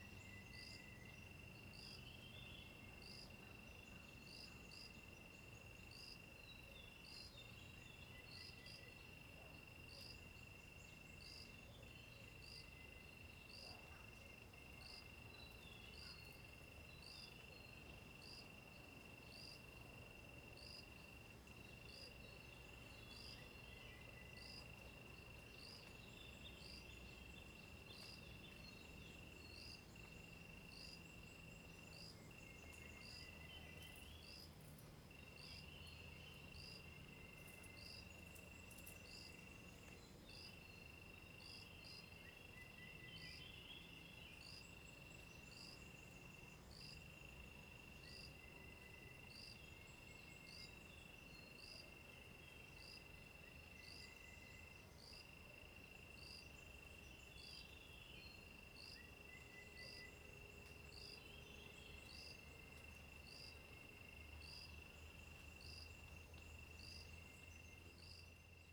投64號縣道, 埔里鎮桃米里 - Insects and birds sounds
Birds singing, face the woods
Zoom H2n MS+ XY